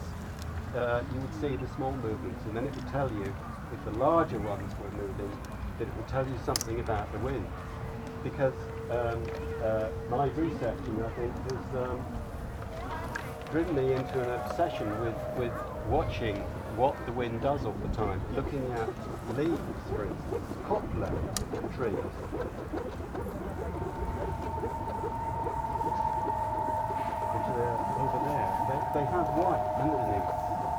Tempelhofer Feld, Berlin, Deutschland - Berlin Sonic Places: Max Eastley, aeolian harps
Max Eastley talks about his aeolian harps installation during Berlin Sonic Places. The project Klang Orte Berlin/Berlin Sonic Places was initiated by Peter Cusack in the frame of his Residency at The DAAD Artists-in-Berlin Program and explores our relationship with and the importance of sound in the urban context.